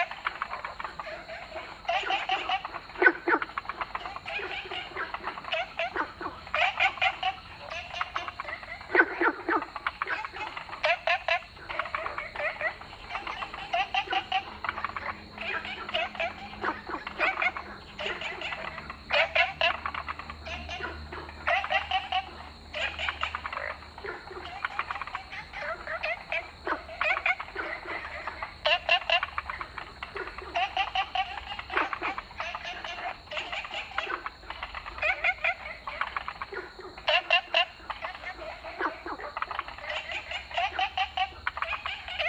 Fuyang Eco Park, Taipei City, Taiwan - Frogs chirping
In the park, Frog sound, Ecological pool
Zoom H2n MS+XY